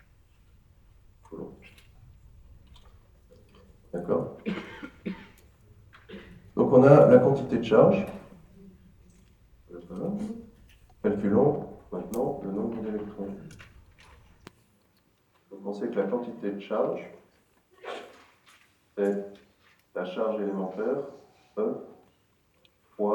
Centre, Ottignies-Louvain-la-Neuve, Belgique - A course of electricity
In the very big Agora auditoire, a course of electricity. In first, a pause, and after, the course.
Ottignies-Louvain-la-Neuve, Belgium, March 2016